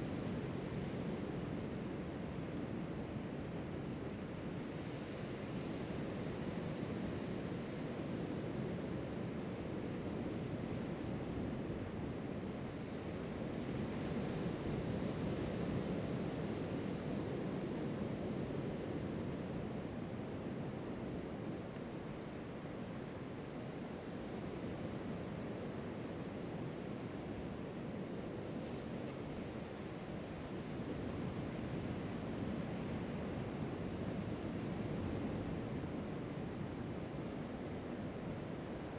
Swallow Cave, Lüdao Township - sound of the waves
Environmental sounds, sound of the waves
Zoom H2n MS +XY